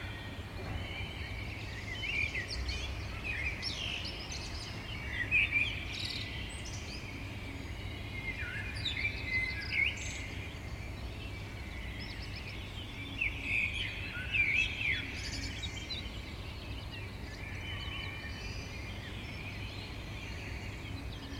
Morning chorus am Sankt Elisabeth Platz, Vienna
Morning bird (and traffic) chorus heard outside my window